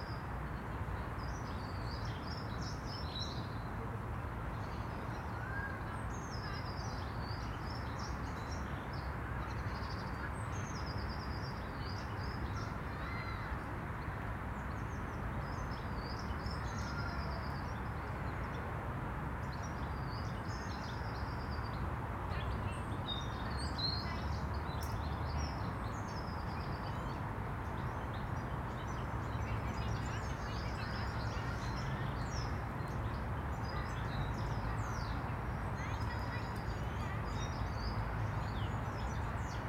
The Poplars Roseworth Avenue The Grove Stoneyhurst Road West Stoneyhurst Road The Quarry Park
Above the traffic noise of Matthew Bank
two women watch their children play
A man limps slowly by
perhaps anticipating icy steps to come
Blackbirds toss the leaf litter
beneath the ash trees
Small birds move through the trees and bushes
on the other side of the park